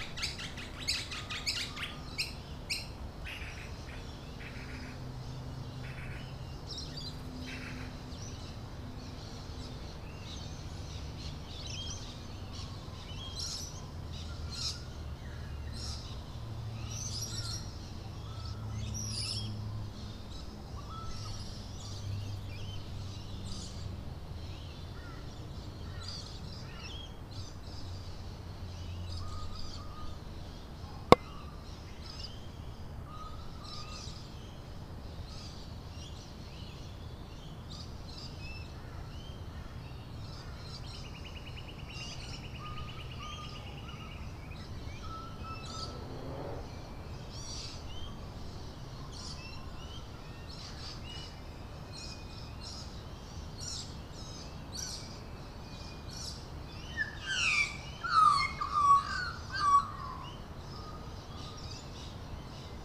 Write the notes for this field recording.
Early winter morning, Birds awake before the city .( Neil Mad )